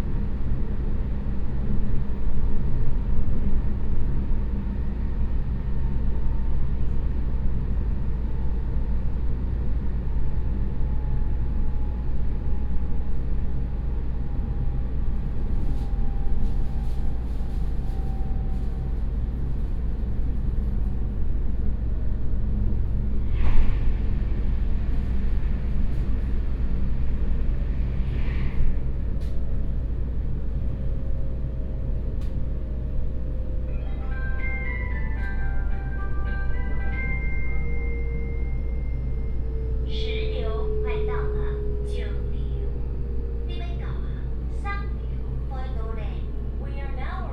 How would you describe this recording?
In a railway carriage, from Linnei Station toShiliu Station